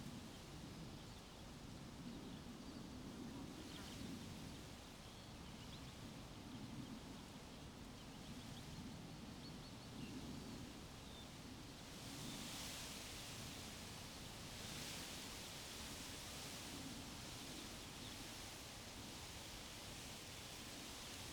workum, slinkewei: bird sanctuary - the city, the country & me: reed swaying in the wind
the city, the country & me: june 24, 2015
Workum, Netherlands